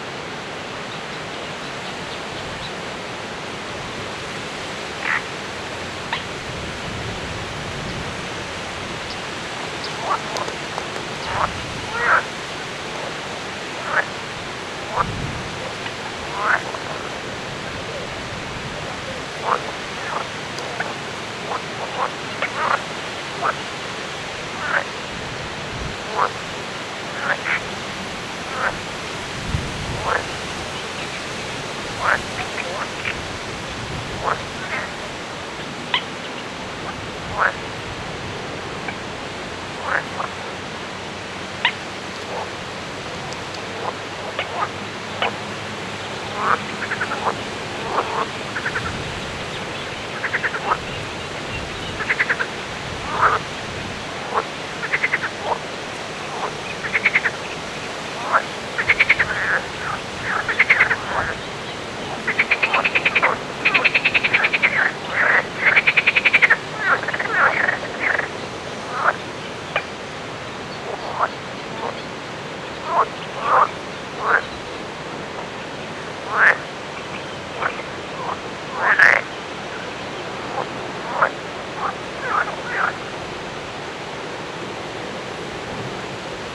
Ptasi Raj, Gdańsk, Poland - Grobla żaby / frogs
Grobla żaby / frogs rec. Rafał Kołacki